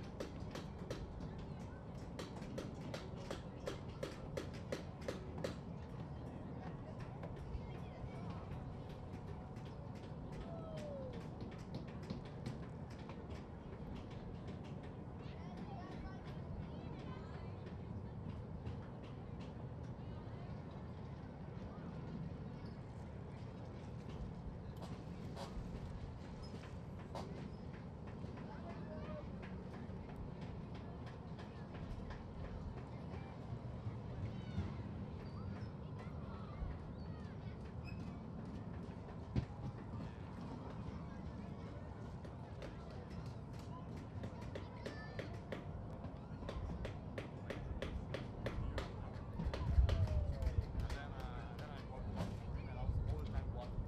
{"date": "2010-11-16 03:25:00", "description": "Berkeley Marina - adventure park for kids", "latitude": "37.86", "longitude": "-122.31", "altitude": "3", "timezone": "US/Pacific"}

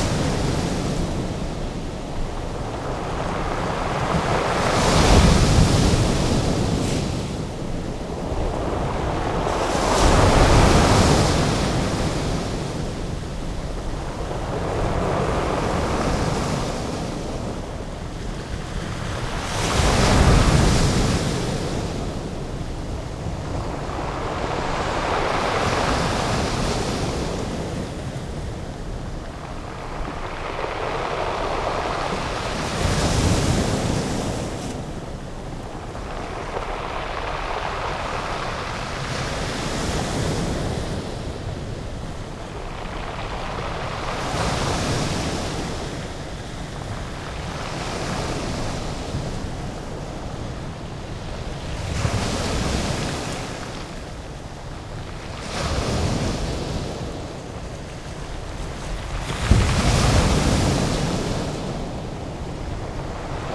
{"title": "Pebble beach remnant Deoksan", "date": "2019-11-10 13:00:00", "description": "Returning to this remnant pebble beach one year on...there is increased military security along the coast in this area...access is restricted...", "latitude": "37.38", "longitude": "129.26", "altitude": "6", "timezone": "Asia/Seoul"}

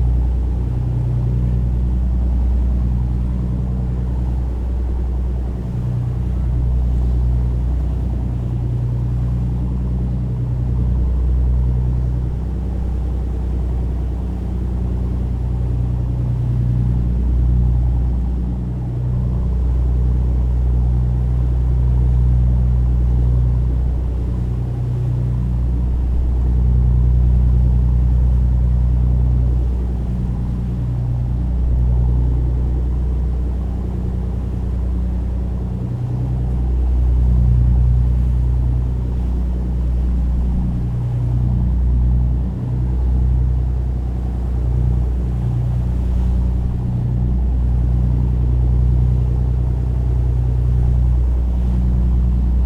{"title": "Farne Islands ... - Grey Seal Cruise ...", "date": "2018-11-06 12:15:00", "description": "Grey seal cruise ... Inner Farne ... background noise ... open lavalier mics clipped to baseball cap ...", "latitude": "55.62", "longitude": "-1.66", "timezone": "GMT+1"}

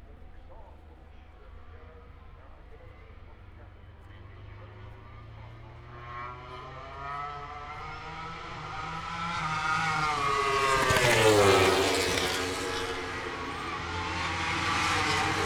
{"title": "Lillingstone Dayrell with Luffield Abbey, UK - British Motorcycle Grand Prix 2016 ... mot grand prix ...", "date": "2016-09-02 10:00:00", "description": "Moto grand Prix ... Free practice one ... International Pit Straight ... Silverstone ... open lavaliers on T bar ...", "latitude": "52.07", "longitude": "-1.02", "altitude": "149", "timezone": "Europe/London"}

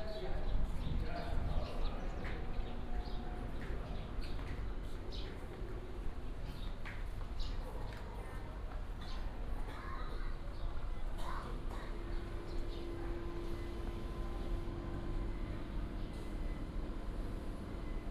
{"title": "大廓順天宮, Baozhong Township - Walking in the temple", "date": "2017-03-01 13:07:00", "description": "Walking in the temple", "latitude": "23.70", "longitude": "120.32", "altitude": "13", "timezone": "Asia/Taipei"}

